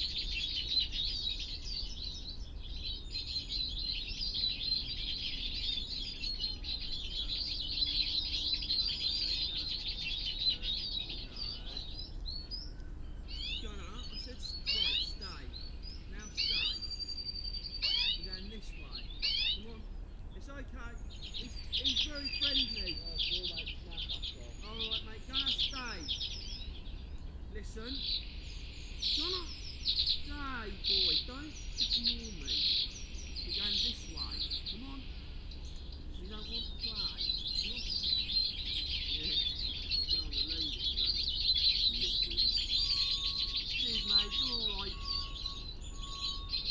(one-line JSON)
{"title": "Cleveland Way, Filey, UK - sounds at a mist net ...", "date": "2019-10-15 10:30:00", "description": "sounds at a mist net ... a bird ringing site ... a recording of a recording of a tape loop used to attract birds to the area ... parabolic ... background noise ... conversations from a dog owner ... plus the mating call of a reversing vehicle ...", "latitude": "54.22", "longitude": "-0.28", "altitude": "46", "timezone": "Europe/London"}